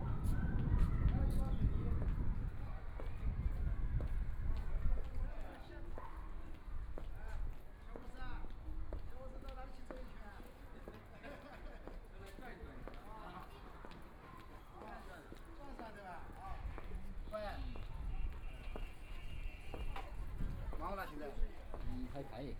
Jukui Road, Shanghai - Through the old streets
Through the old streets and communities, Walking through the Street, Traffic Sound, Walking through the market, Walking inside the old neighborhoods, Binaural recording, Zoom H6+ Soundman OKM II
Shanghai, China, 25 November 2013